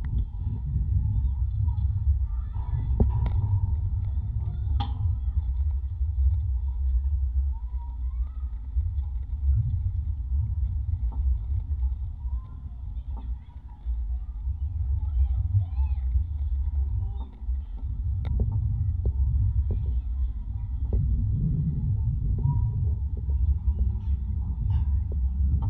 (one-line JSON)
{"title": "Utena, Lithuania, footbridge", "date": "2018-06-14 19:10:00", "description": "4 contact microphones on various parts of a footbridge", "latitude": "55.50", "longitude": "25.60", "altitude": "102", "timezone": "Europe/Vilnius"}